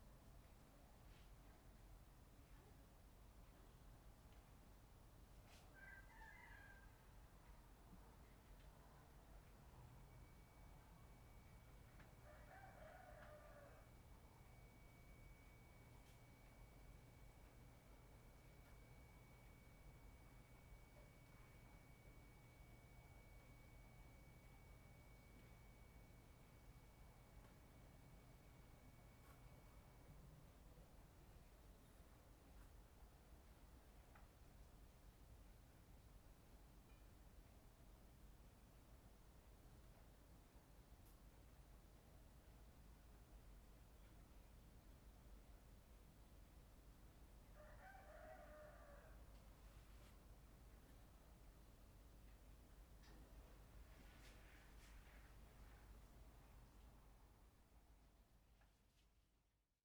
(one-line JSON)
{"title": "Shueilin Township, Yunlin - Early in the morning", "date": "2014-02-01 05:30:00", "description": "On the second floor, Early in the morning, Chicken sounds, Zoom H6 M/S", "latitude": "23.54", "longitude": "120.22", "altitude": "6", "timezone": "Asia/Taipei"}